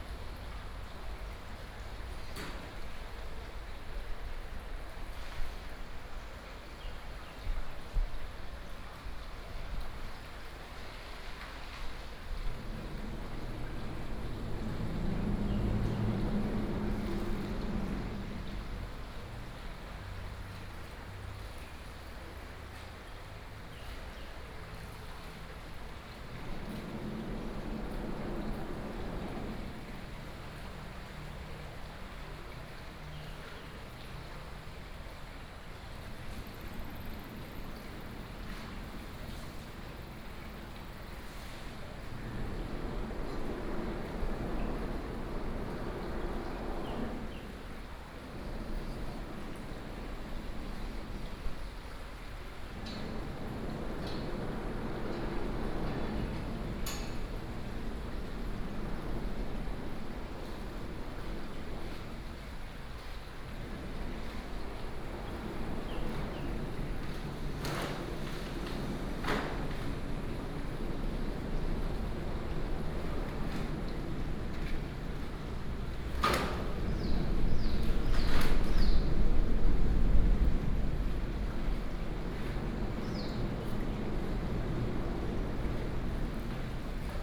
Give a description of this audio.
Under the bridge, The sound of water, Traffic Sound, Birdsong, Very hot weather